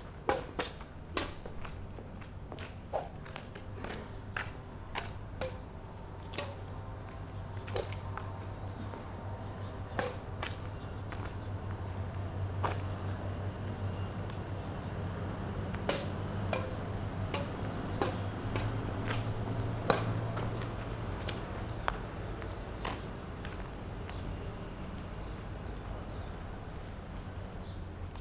{"title": "Stalia, Horizon Beach Hotel, path - badminton match", "date": "2012-09-26 17:46:00", "description": "an excerpt of a badminton match we played with my girlfriend. hotel and street ambience in the back ground. short reverb, sound reflecting from two buildings close to each other and their balconies.", "latitude": "35.30", "longitude": "25.42", "altitude": "20", "timezone": "Europe/Athens"}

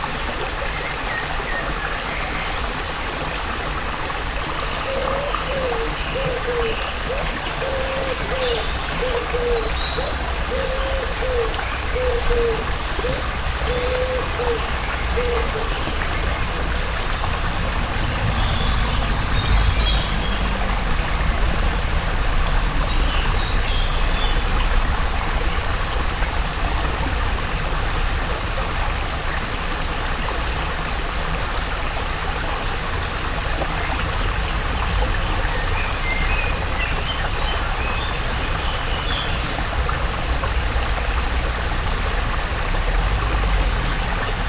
{"title": "Chastre, Belgique - Orne river", "date": "2016-06-19 18:45:00", "description": "The quiet Orne river, recorded in the woods near the town hall of the small city called Chastre.", "latitude": "50.61", "longitude": "4.64", "altitude": "130", "timezone": "Europe/Brussels"}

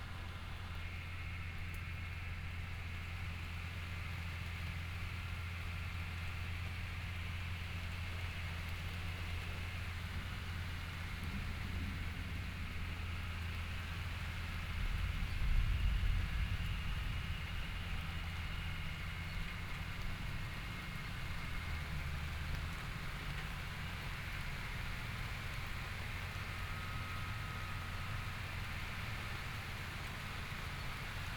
{"title": "Luc-Armau, France - Orage et tracteur", "date": "2014-08-08 19:00:00", "description": "Un orage qui arrive, un tracteur qui tourne...\nZoom H4 / binaural (soundman).", "latitude": "43.44", "longitude": "-0.07", "altitude": "274", "timezone": "Europe/Paris"}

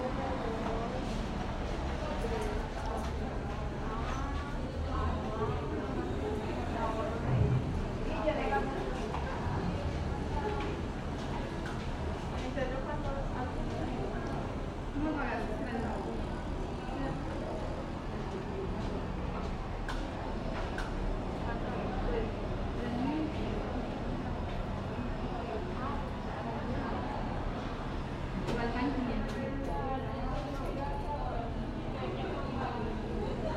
{"title": "calle, CC.Los molinos, Medellín, Antioquia, Colombia - Ambiente Centro Comercial", "date": "2021-10-28 17:23:00", "description": "Información Geoespacial\n(latitud: 6.233051, longitud: -75.604038)\nCentro Comercial Los Molinos\nDescripción\nSonido Tónico: Gente hablando, pasos\nSeñal Sonora: Arreglos en una construcción\nMicrófono dinámico (celular)\nAltura: 40 cm\nDuración: 3:01\nLuis Miguel Henao\nDaniel Zuluaga", "latitude": "6.23", "longitude": "-75.60", "altitude": "1537", "timezone": "America/Bogota"}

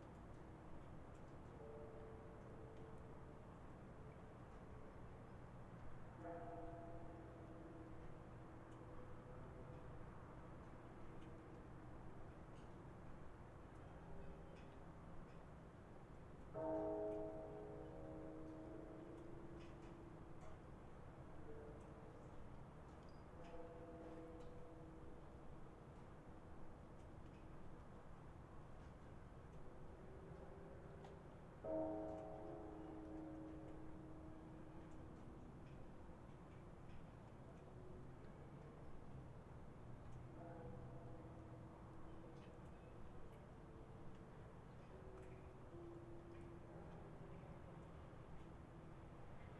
{"title": "Takano, Ritto, Shiga Prefecture, Japan - New Year 2015 Temple Bells and Fireworks", "date": "2015-01-01 00:27:00", "description": "New Year temple bells and fireworks, beginning just before 1 January 2015. The recording was trimmed with Audacity on CentOS (Linux). No other processing was done. Max amplitude -2.2dB was preserved as-is from the recorder.", "latitude": "35.03", "longitude": "136.02", "altitude": "109", "timezone": "Asia/Tokyo"}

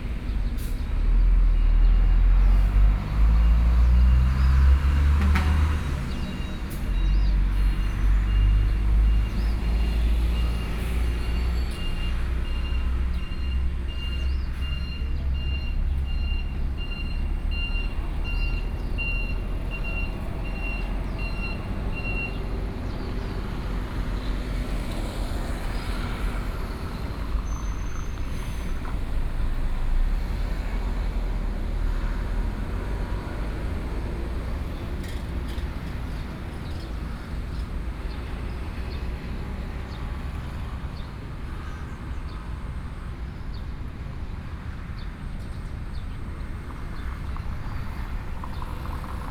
{"title": "Sec., Nongquan Rd., Yilan City - Traffic Sound", "date": "2014-07-22 11:24:00", "description": "Traffic Sound, Road corner\nSony PCM D50+ Soundman OKM II", "latitude": "24.75", "longitude": "121.75", "altitude": "13", "timezone": "Asia/Taipei"}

